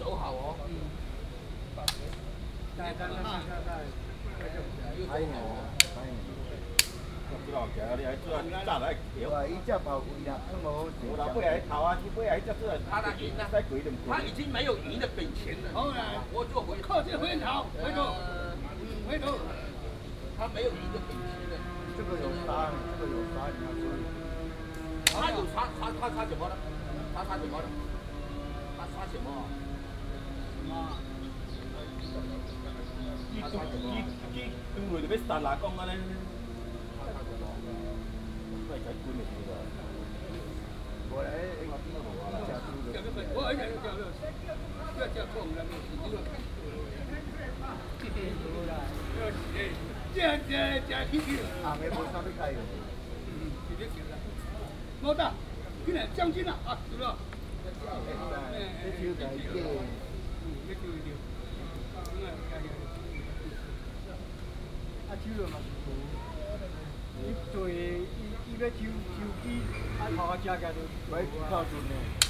農村公園, Banqiao Dist., New Taipei City - play chess
Many people play chess, in the Park
New Taipei City, Taiwan